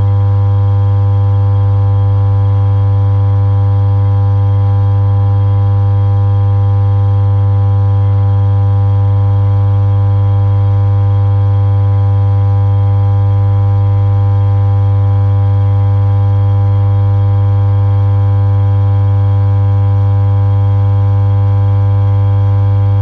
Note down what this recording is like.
Dual contact microphone recording of electrical substation hum, captured through metal beams.